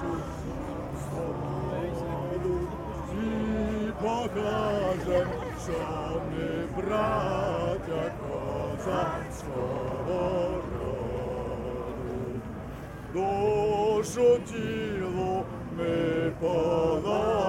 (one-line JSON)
{"title": "Av. des Arts, Saint-Josse-ten-Noode, Belgique - Demonstration - songs for Ukraine", "date": "2022-03-06 14:12:00", "description": "Songs - hymns.\nTech Note : Ambeo Smart Headset binaural → iPhone, listen with headphones.", "latitude": "50.85", "longitude": "4.37", "altitude": "66", "timezone": "Europe/Brussels"}